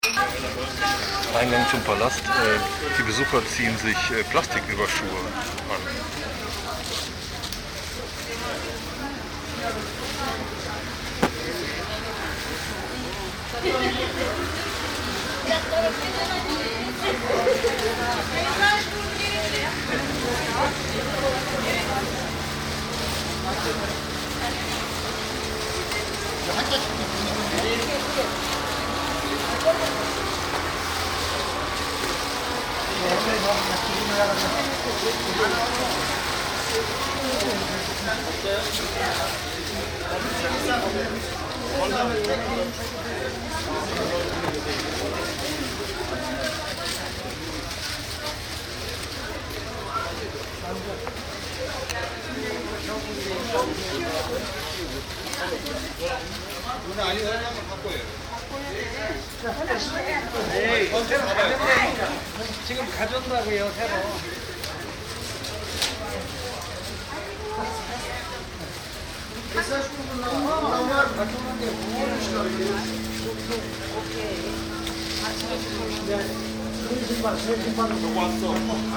Domabahce Palace Istanbul - Dolmabahce Palace Istanbul
entrance of dolmabahce palace, may 2003: visitors talk and put on plastic overshoes. - project: "hasenbrot - a private sound diary"
Dolmabahçe Caddesi, Beşiktaş/Istanbul Province, Turkey